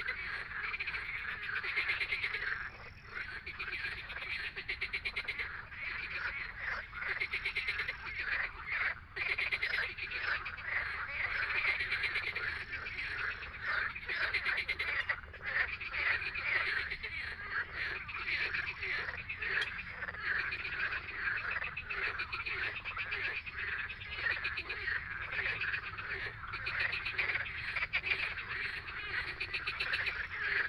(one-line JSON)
{"title": "Hálova, Petržalka, Slovakia - Pelophylax ridibundus", "date": "2020-05-09 20:30:00", "description": "Distant high pitched cries of common swifts, insects, runners, basketball practice, sirens, omnipresent humming cars, scooters, random snippets of conversations, but most importantly: impressive crescendos of marsh frogs, vocalizing in explosive waves amidst the Bratislava's soviet-era panel-house borough.", "latitude": "48.12", "longitude": "17.11", "altitude": "135", "timezone": "Europe/Bratislava"}